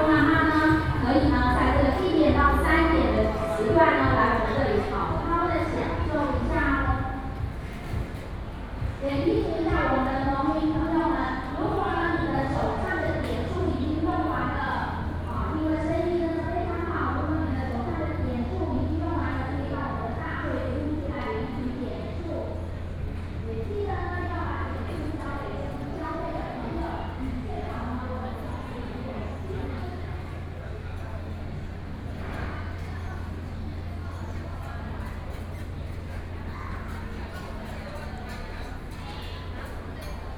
Taipei EXPO Park, Taiwan - soundwalk
Walking through the bazaar
Taipei City, Taiwan, April 2014